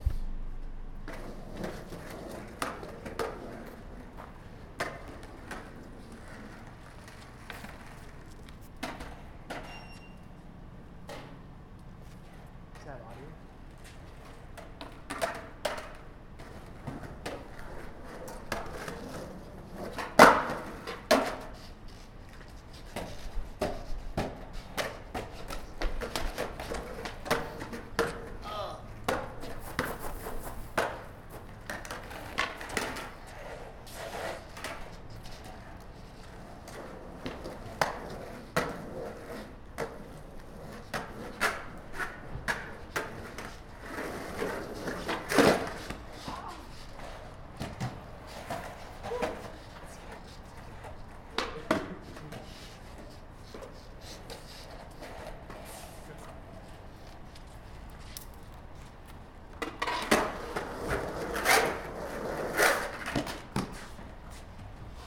E Cache La Poudre St, Colorado Springs, CO, USA - Sunday Night Skating
South Hall Residents skating on a warm night. Zoom H1 placed in the inner courtyard of South Hall about 4ft off the ground on a tripod. No dead cat used.